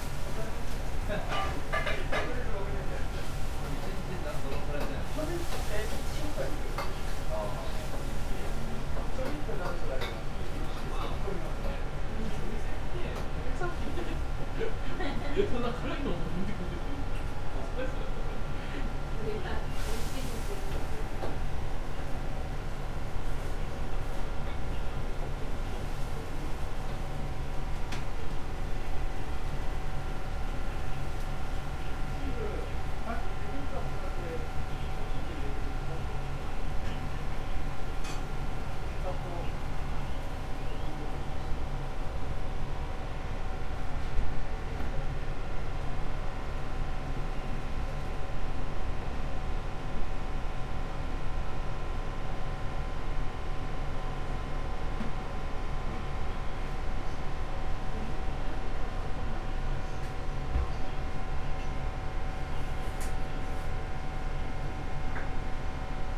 chome asakusa, tokyo - noodle soup restaurant
noodle soup in process of preparation